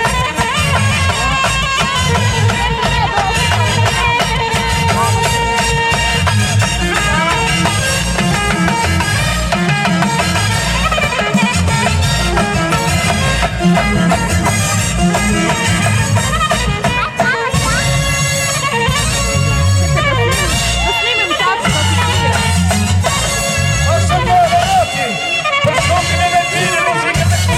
Pehlin - Rijeka, Croatia - Jurjevo-Gypsy festival
Jurjevdan Roma Annual Festival